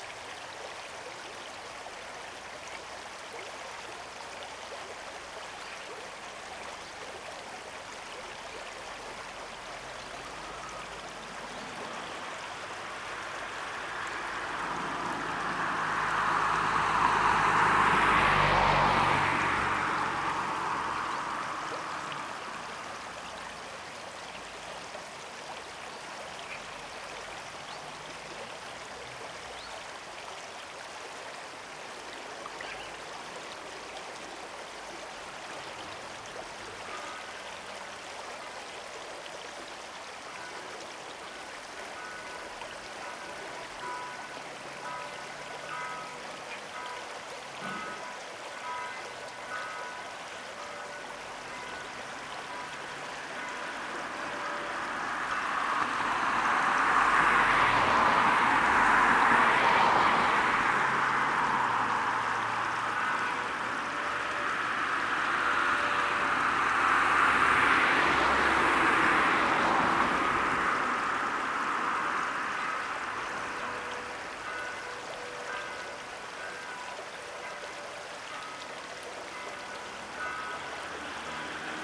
*Quasi-Binaural - best listening with headphones.
On the Ilm bridge of Bad Berka city, the river manifests its distictive baseline textures as Church bell joins in in the 49th second in the left channel. Occassional engines of cars run through the stereo space adding energy and dynamism to the soundscape.
Gear: MikroUsi Pro, my ear lobes and and ZOOM F4 Field Recorder.